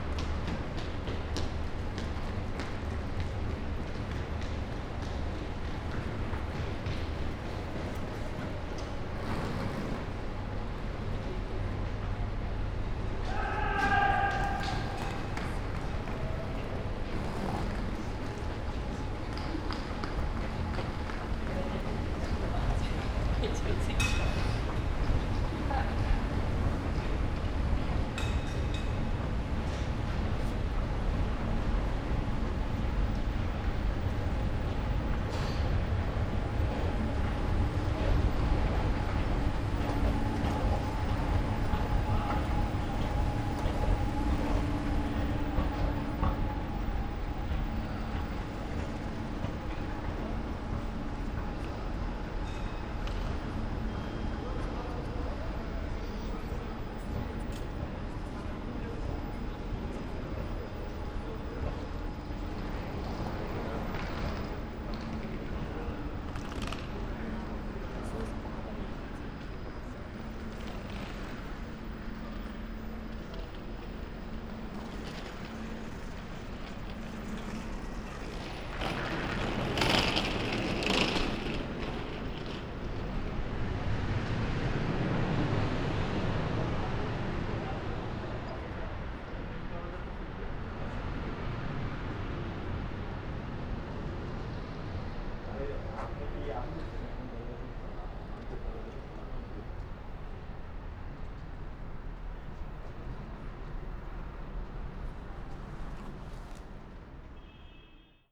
Graz, Hauptbahnhof - station walking
walking around Graz main station at Friday night
(Sony PCM D50, Primo EM172)
Graz, Austria, 31 January 2020